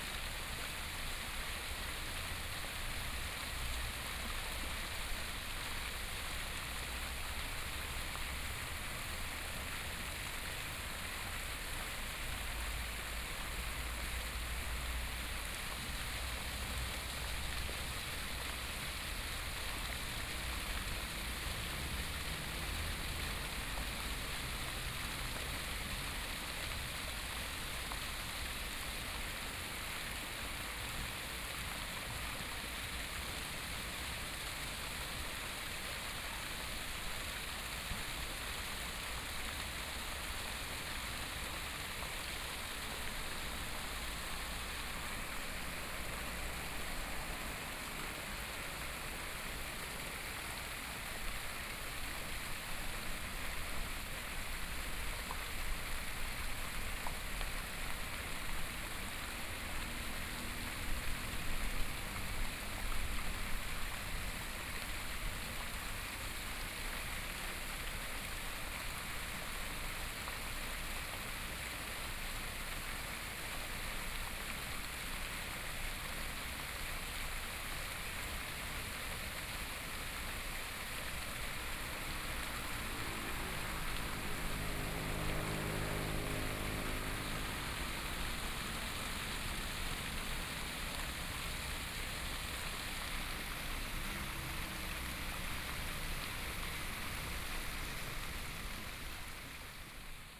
Fountain, Traffic Sound
Binaural recordings
Zoom H4n+ Soundman OKM II
Hualien County, Taiwan, 24 February, 14:57